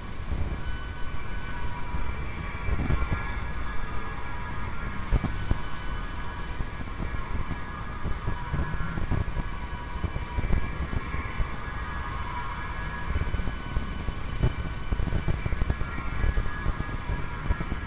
Binckhorstlaan, Den haag
Flag pole, contact microphones